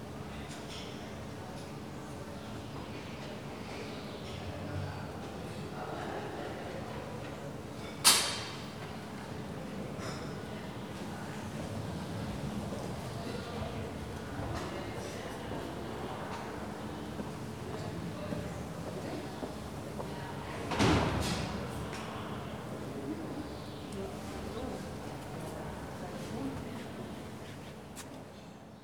backyard of a tenement at Kantstraße. space filled with clank of silverware form a rather decent-sized canteen. hum of a AC unit. rich swoosh of thousand leaves on a big tree. visitors passing towards photo gallery in the entrance nearby. pleasantly warm, drowsy afternoon.